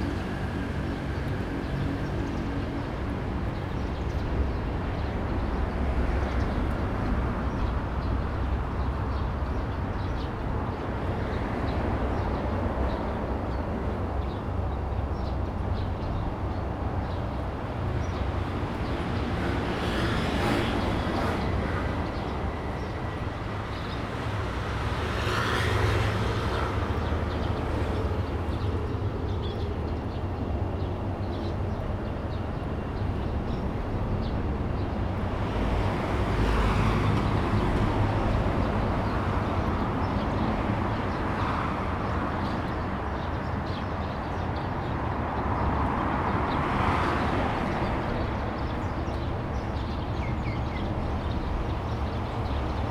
next to the high-speed road, Traffic sound, The sound of birds
Zoom H2n MS+XY
Changhua County, Taiwan